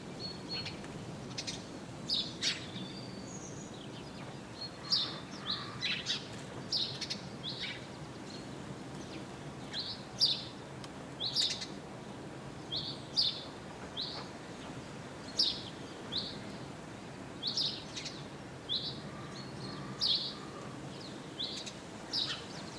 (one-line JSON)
{"title": "Chemin des Ronferons, Merville-Franceville-Plage, France - Birds & horses", "date": "2020-03-28 12:02:00", "description": "Birds singing and two horses in a little road, during covid-19 pandemic, Zoom H6", "latitude": "49.27", "longitude": "-0.18", "altitude": "4", "timezone": "Europe/Paris"}